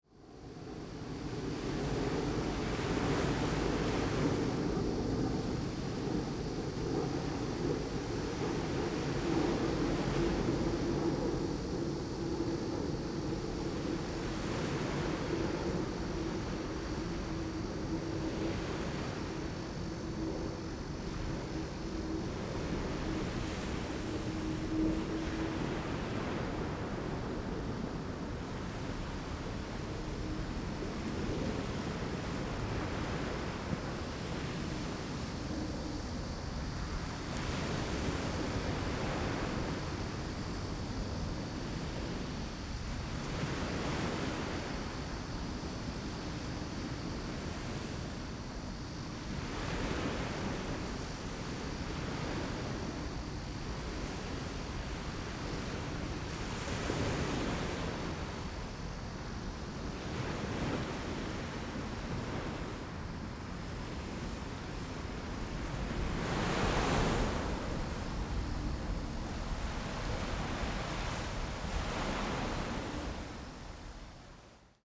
淺水灣海濱公園, New Taipei City - the waves and the aircraft
The sound of the waves ahead, aircraft flying through
Rode NT4+Zoom H4n
New Taipei City, Taiwan, 25 June, 11:09